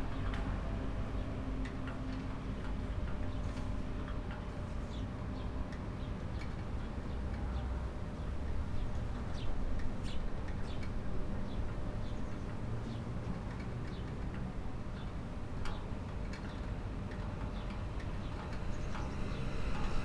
wind-whipped ropes of flagstaffs
the city, the country & me: july 20, 2013
Deutschland, European Union